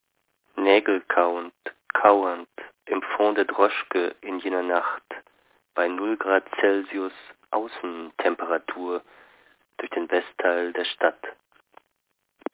droschkend zu dir (1) - droschkend zu dir (1) - hsch ::: 27.03.2007 23:19:18